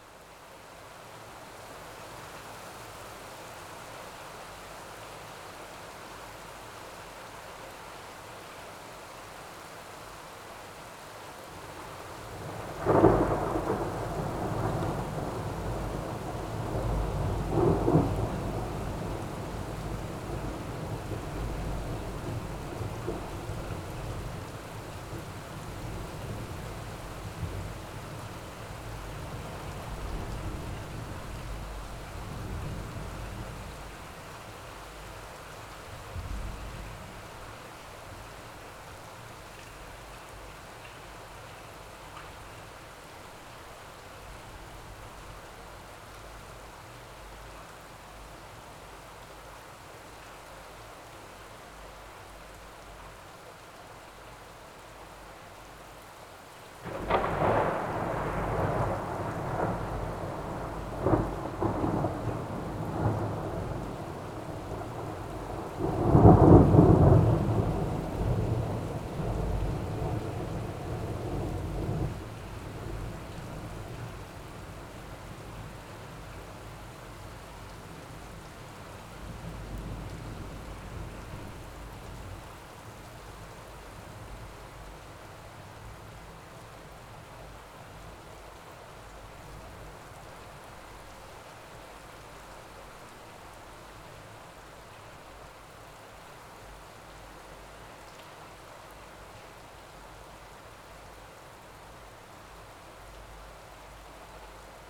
Poznan, Mateckiego Street - suppressed storm
sounds of rain and thunder recorded over an ajar window.
Poznań, Poland, April 2014